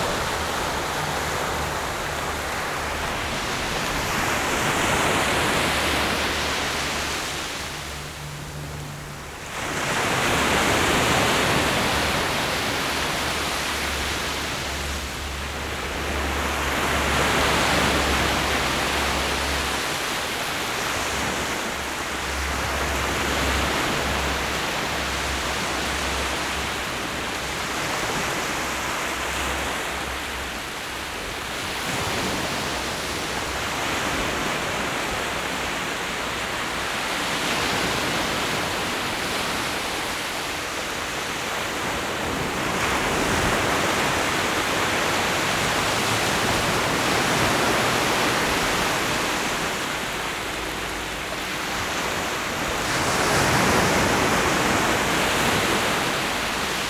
Laomei, Shimen, New Taipei City - The sound of the waves